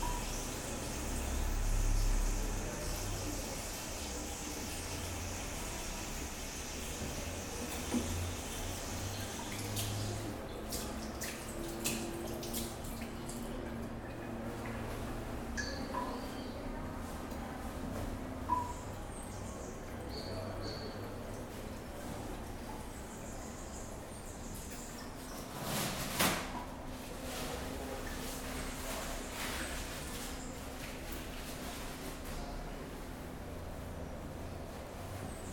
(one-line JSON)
{"title": "Cra., Medellín, Antioquia, Colombia - Baños posgrado, Universidad de Medellín", "date": "2021-09-23 13:20:00", "description": "Descripción\nSonido tónico: Baños bloque 12\nSeñal sonora: Llaves de agua, maquina de papel\nGrabado por Santiago Londoño Y Felipe San Martín", "latitude": "6.23", "longitude": "-75.61", "altitude": "1576", "timezone": "America/Bogota"}